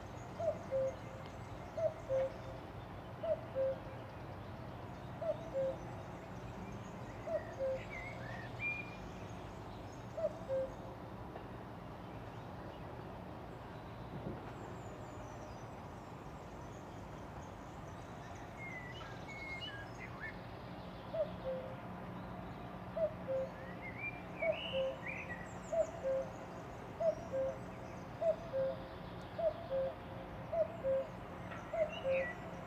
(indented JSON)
{
  "title": "Rummelsburg, Berlin, Germany - Cuckoo",
  "date": "2012-05-14 08:10:00",
  "description": "I was surprised to hear this in the city. Berlin's wildlife is very varied in some areas.",
  "latitude": "52.49",
  "longitude": "13.48",
  "altitude": "32",
  "timezone": "Europe/Berlin"
}